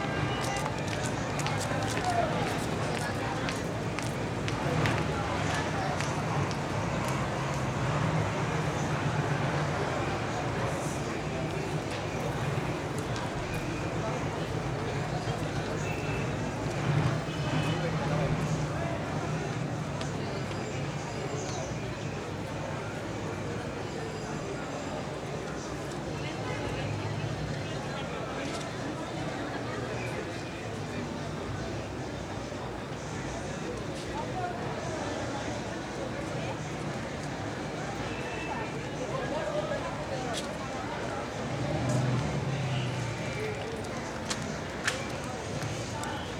Santiago de Cuba, street corner, San Geronimo and Mariano Corona